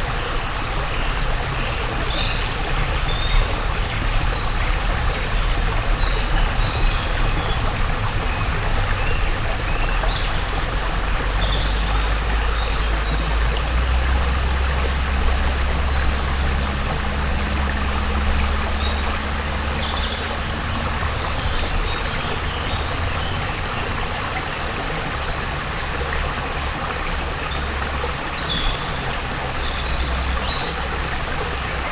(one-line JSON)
{"title": "Chastre, Belgique - Orne river", "date": "2016-06-19 18:45:00", "description": "The quiet Orne river, recorded in the woods near the town hall of the small city called Chastre.", "latitude": "50.61", "longitude": "4.64", "altitude": "130", "timezone": "Europe/Brussels"}